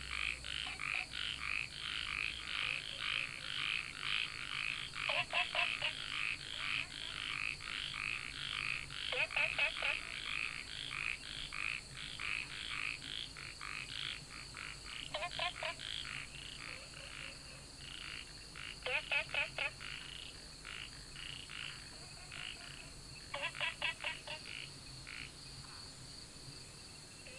Taomi Ln., Puli Township - Night hamlet
Frogs chirping, Night hamlet, Insects called
Puli Township, 桃米巷9-3號, 10 August